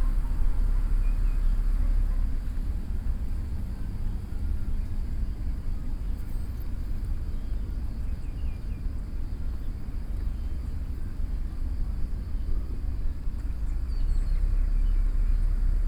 關渡防潮堤, Taipei City - Bicycle, Footsteps
Taipei City, Beitou District, 關渡防潮堤, 8 November, ~07:00